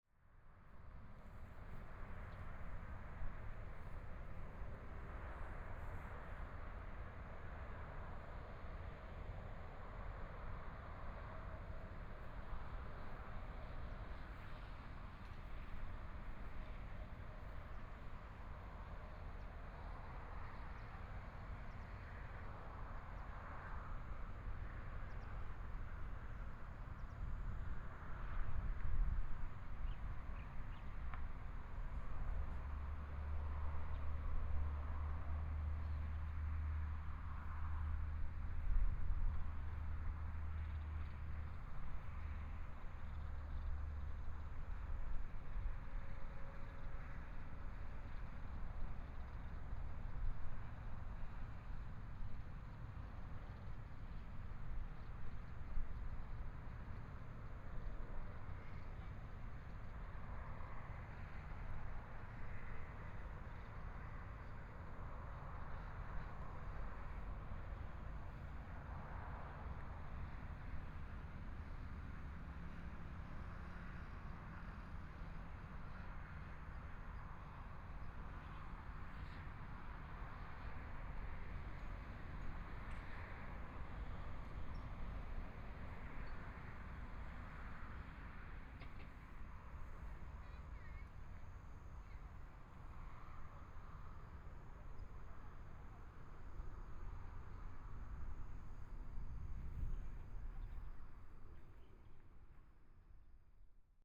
Taitung Forest Park, Taiwan - Environmental sounds
Traffic Sound, Environmental sounds, Binaural recordings, Zoom H4n+ Soundman OKM II ( SoundMap20140117- 9)